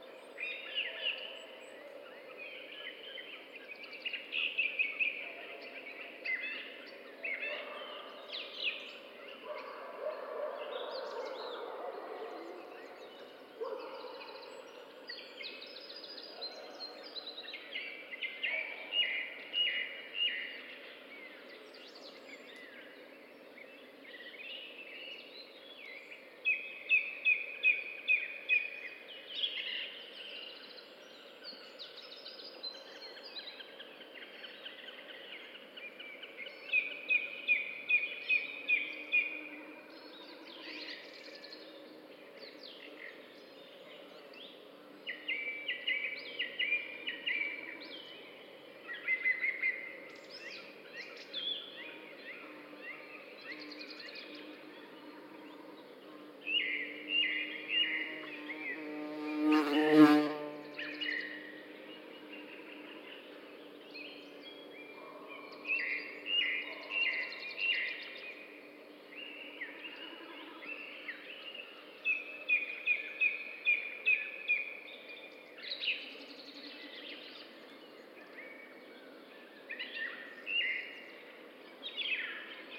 quarantine evening walk in the wood. birds, distant dogs...
8 April 2020, 19:30